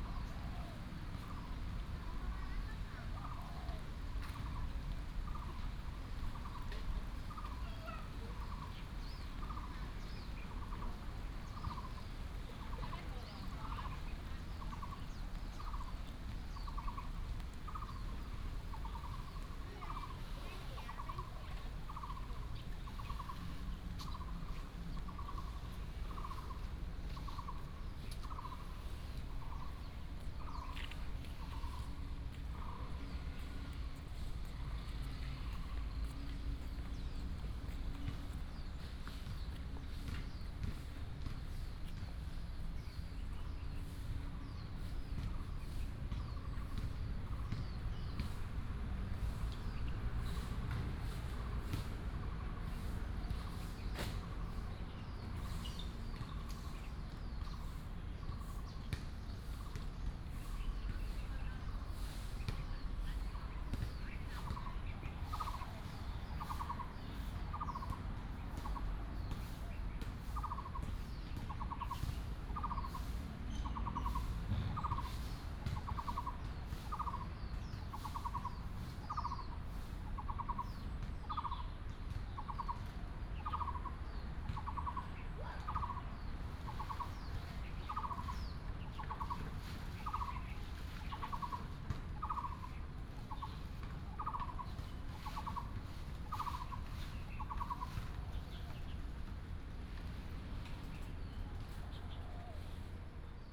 中壢藝術園區, Taoyuan City - in the Park
in the Park, Bird call, traffic sound